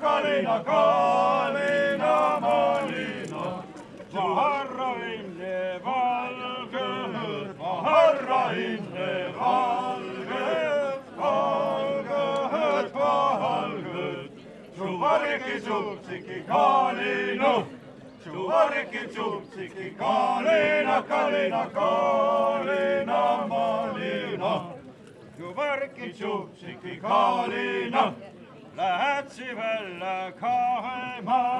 estonia, mooste, folk singers
south estonian folk singers